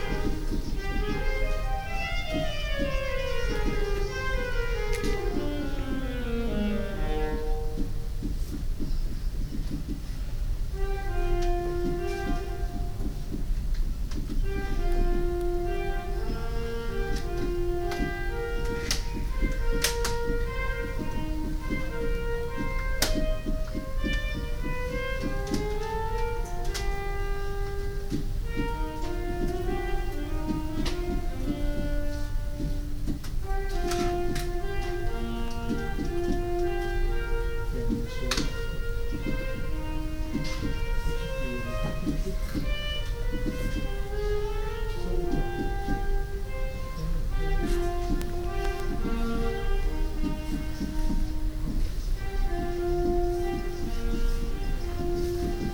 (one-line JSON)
{"title": "Practising the Saxophone", "date": "2011-04-11 13:19:00", "description": "Recording of a girl practicing the saxophone in the special sound booth of the public library. You can also hear another girl wearing headphones hammering the keys of a electronic piano. Binaural recording.", "latitude": "52.08", "longitude": "4.32", "altitude": "8", "timezone": "Europe/Amsterdam"}